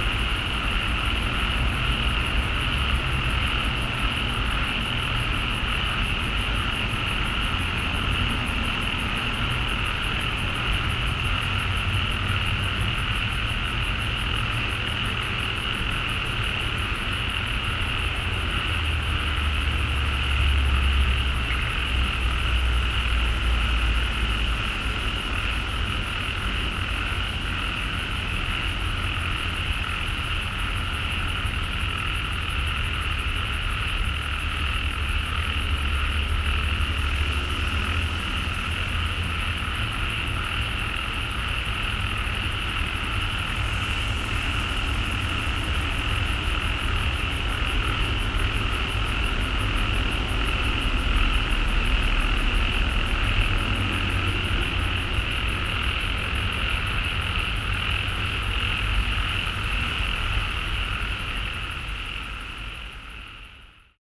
The Bamboo Curtain Studio, New Taipei City, Taiwan - Frog chirping
Frog chirping, Traffic Sound
Binaural recordings
Sony PCM D50 + Soundman OKM II